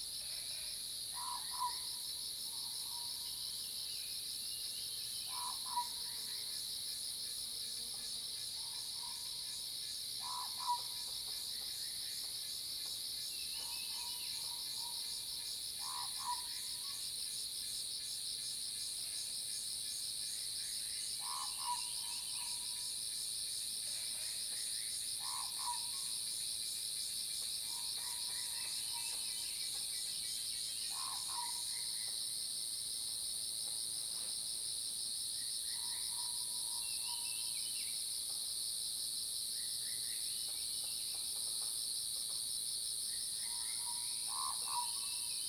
華龍巷, 魚池鄉 Nantou County - Insect and Bird sounds
Insect sounds, Cicada sounds, Bird sounds
Zoom H2n MS+XY
Nantou County, Yuchi Township, 華龍巷43號, 8 June 2016, ~07:00